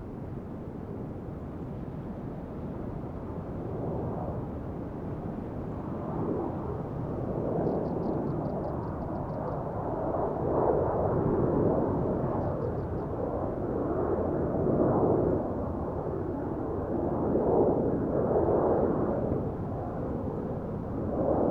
Airplanes rising over the sea waves on a nice windy day